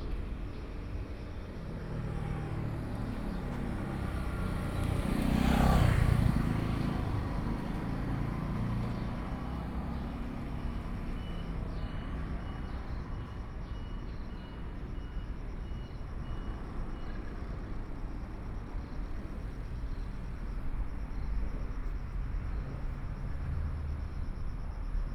宜蘭市南津里, Yilan County - under the railroad tracks
In the bottom of the track, Traffic Sound, Birds, Trains traveling through
Sony PCM D50+ Soundman OKM II
Yilan County, Taiwan, 26 July 2014